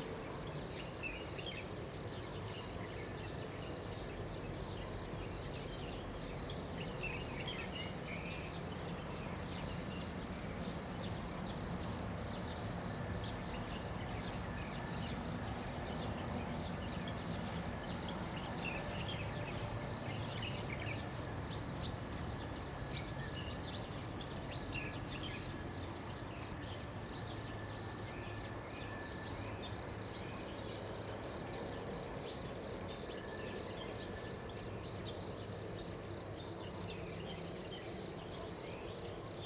體驗廚房, 見學園區桃米里 - Bird and traffic sound
Bird calls, Traffic Sound
Zoom H2n MS+XY